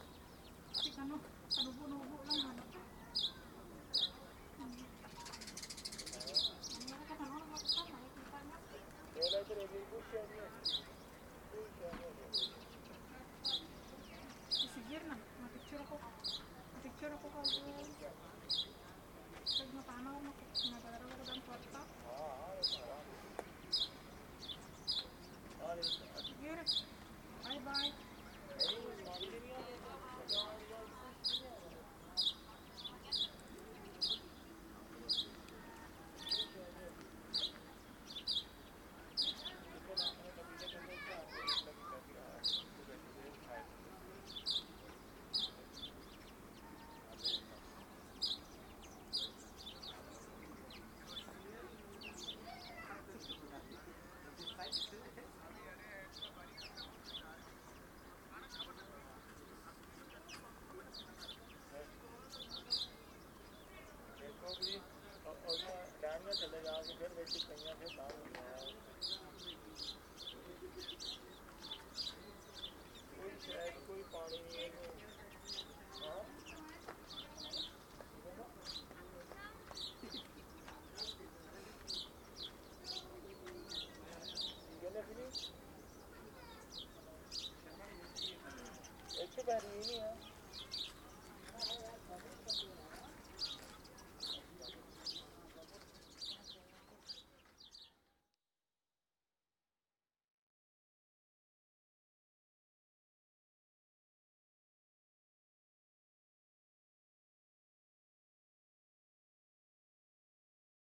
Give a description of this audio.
"Three of Life", Désert du Barhain, ambiance à "l'intérieur de l'arbre" et de son foisonnement de branches